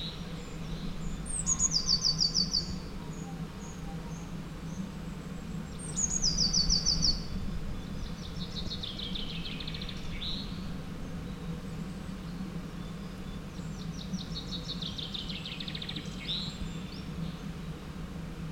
Sound of a rural landcape from a quiet road on a sunday afternoon.